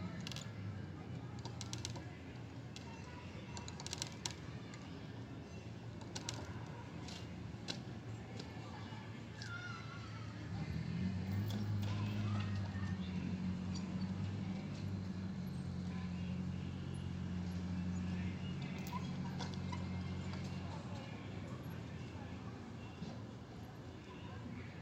Cl., El Rosal, Cundinamarca, Colombia - Park Barrio Bolonia
In this environment you can hear a park located in a middle-class neighborhood of the western savannah of Bogotá, in the municipality of El Rosal, we hear in this location people coughing, cars speeding, whistles, swings, screams, people talking, a person jogging, dogs barking, car alarms, snapping hands, laughter, children.
Región Andina, Colombia, 3 May